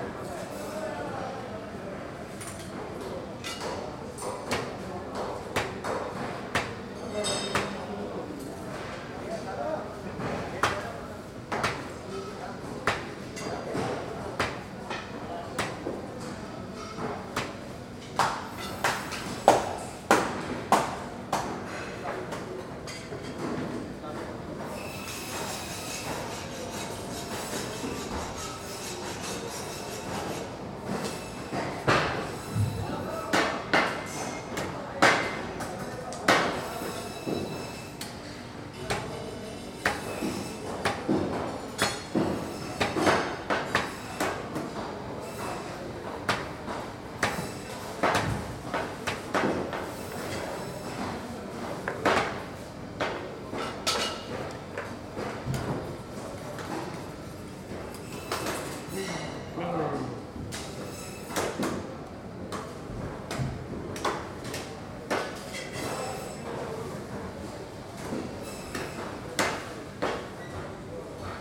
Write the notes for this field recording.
Manam Meat Market, Marché de viande de Manama - Barhain